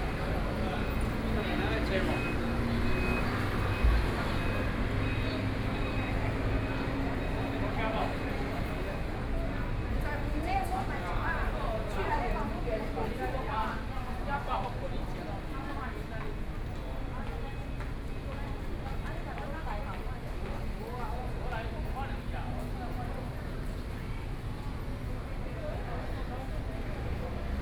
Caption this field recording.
From out of the station platform, Taxi drivers at the outlet to attract guests, Train travel from station, Zoom H4n+ Soundman OKM II